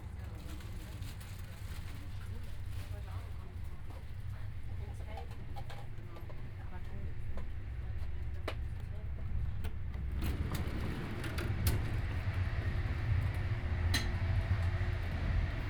porta westfalica - soundwalk in noisy train
soundwalk in an old and noisy IC train, passing porta westfalica (binaural)